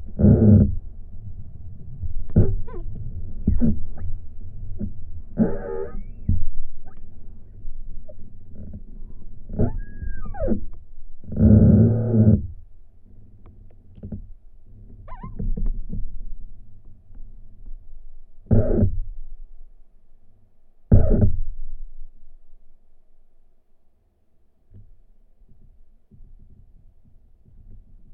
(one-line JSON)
{"title": "Jasonys, Lithuania, rubbing trees", "date": "2020-02-24 14:45:00", "description": "windy day. the trees rubbing to each other. inside process recorded with LOM geophone and contact microphone", "latitude": "55.50", "longitude": "25.51", "altitude": "150", "timezone": "Europe/Vilnius"}